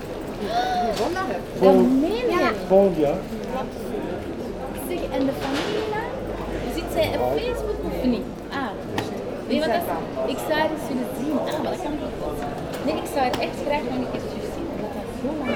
Leuven, Belgique - People enjoying the sun
Into the main commercial artery, people enjoy the sun and discuss quietly.